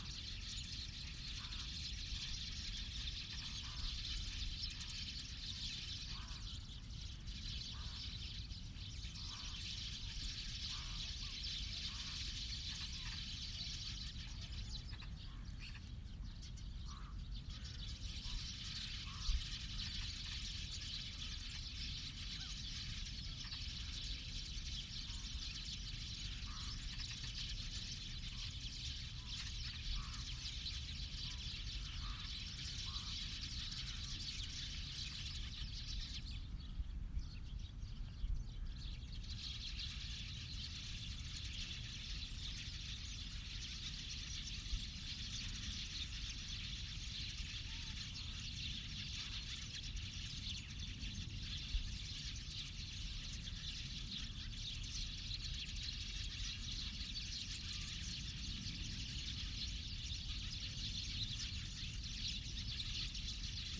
Unnamed Road, Прикубанский, Республика Адыгея, Россия - Kuban river
Forest "Red Kut", border of Krasnodar and the Republic of Adygea
Южный федеральный округ, Россия, 4 November 2020